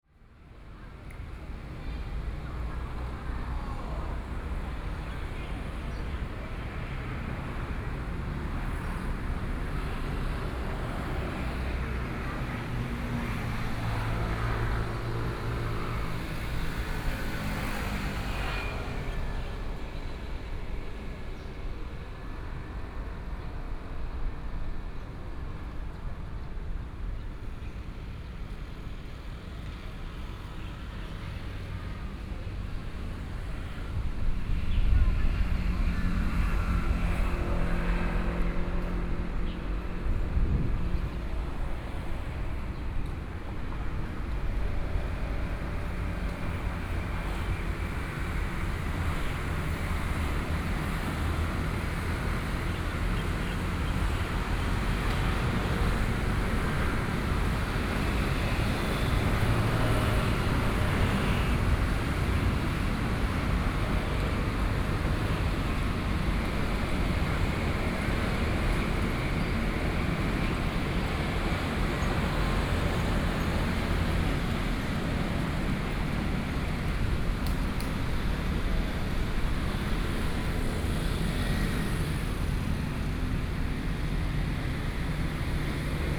苓雅區福南里, Kaohsiung City - in front of the temple
In the square in front of the temple, Traffic Sound